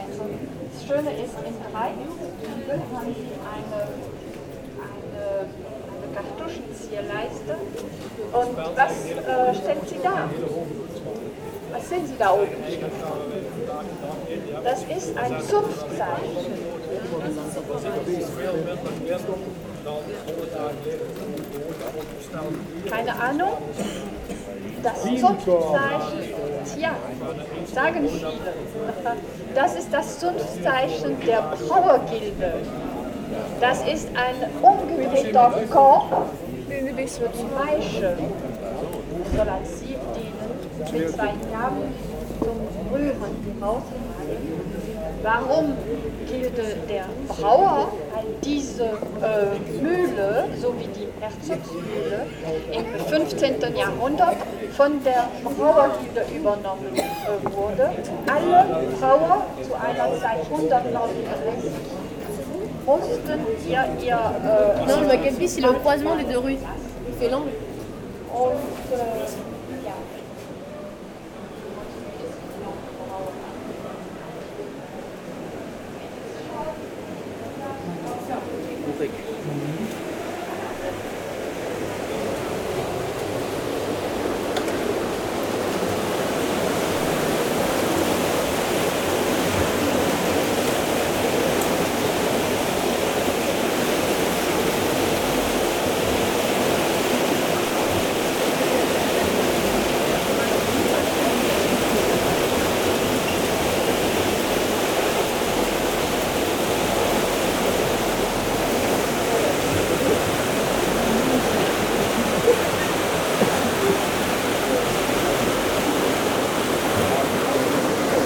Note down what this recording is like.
Into narrow cobblestones streets, a German tourists group is visiting Maastricht. I'm entering into a bakkery, behind there's an enormous water mill. At the end, a Spanish tourists group leaves with the bikes.